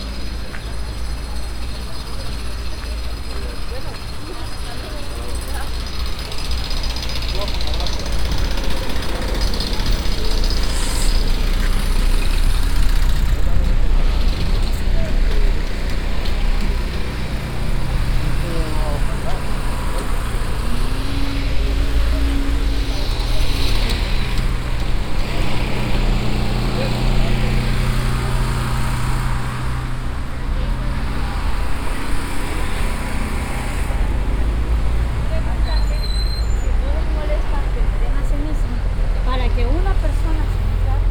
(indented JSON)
{
  "title": "Cuenca, Cuenca, España - #SoundwalkingCuenca 2015-11-27 A soundwalk through the San Antón quarter, Cuenca, Spain",
  "date": "2015-11-27 12:54:00",
  "description": "A soundwalk through the San Antón quarter in the city of Cuenca, Spain.\nLuhd binaural microphones -> Sony PCM-D100",
  "latitude": "40.08",
  "longitude": "-2.14",
  "altitude": "937",
  "timezone": "Europe/Madrid"
}